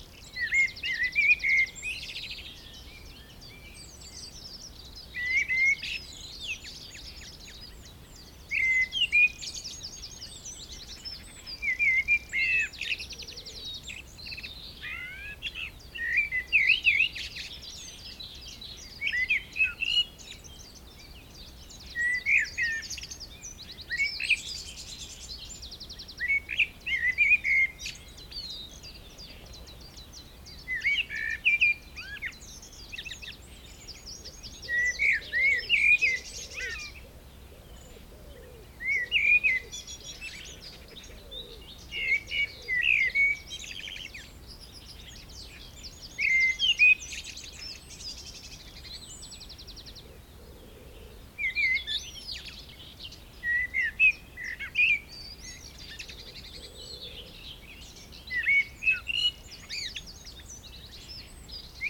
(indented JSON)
{
  "title": "Castle Hill - Dawn Chorus",
  "date": "2020-05-06 15:35:00",
  "description": "Recorded at the Castle Hill Nature reserve, just as the first UK Covid restrictions were being eased.\nLOM MikroUSI, Sony PCM-A10",
  "latitude": "50.85",
  "longitude": "-0.06",
  "altitude": "144",
  "timezone": "Europe/London"
}